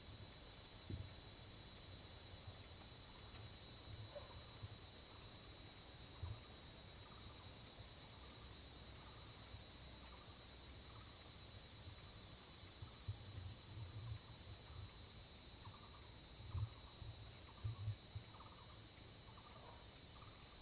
{
  "title": "東富村, Guangfu Township - Next to the woods",
  "date": "2014-08-28 14:50:00",
  "description": "Beside bamboo, Birdsong sound, Insects sound, Cicadas sound, Traffic Sound, Very hot weather\nZoom H2n MS+XY",
  "latitude": "23.65",
  "longitude": "121.46",
  "altitude": "135",
  "timezone": "Asia/Taipei"
}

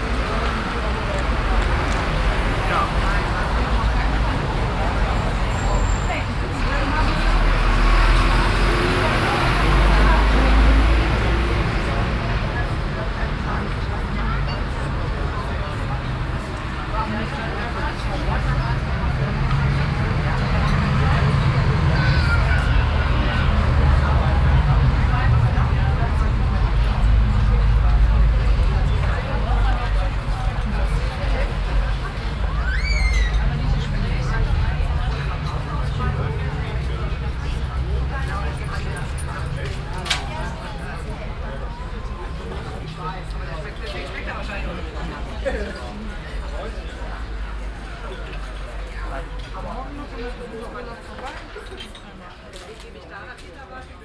{
  "title": "Rüttenscheid, Essen, Deutschland - essen, rüttenscheider str, cafe",
  "date": "2014-04-26 11:10:00",
  "description": "Innerhalb eines der vielen Strassencafes die sich in diesem teil der Straße befinden. der Klang von Stimmen und Barmusik.\nInside one of the many street cafe's that are located on this street. The sound of voices and bar music.\nProjekt - Stadtklang//: Hörorte - topographic field recordings and social ambiences",
  "latitude": "51.44",
  "longitude": "7.01",
  "altitude": "119",
  "timezone": "Europe/Berlin"
}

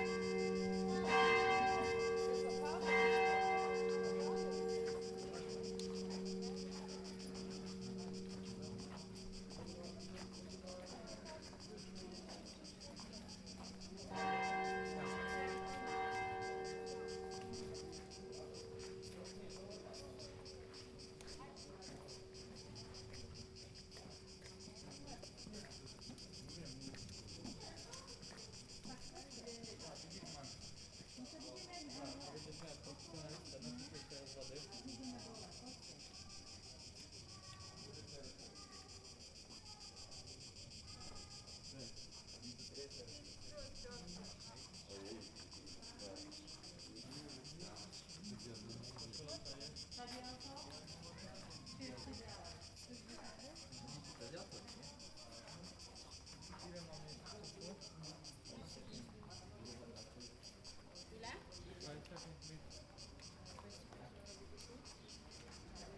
{
  "title": "Jelsa, Hrvatska - Church bells at noon",
  "date": "2014-08-03 12:00:00",
  "description": "Voices of tourists passing by an old church in Jelsa, Hvar. At noon the bells start chiming and children sing to the bells.",
  "latitude": "43.16",
  "longitude": "16.69",
  "altitude": "7",
  "timezone": "Europe/Zagreb"
}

